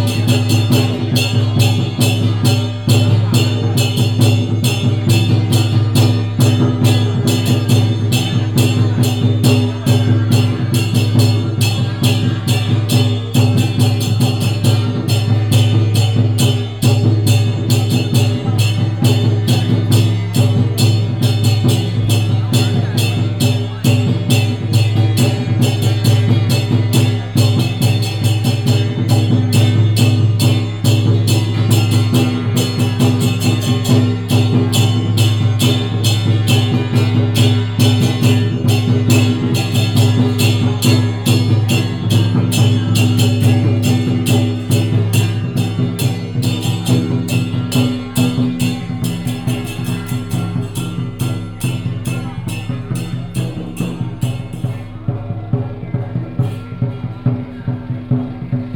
In a small temple, Binaural recordings, Sony PCM D100+ Soundman OKM II
Guanxi Township, Hsinchu County, Taiwan, 24 September 2017, 19:35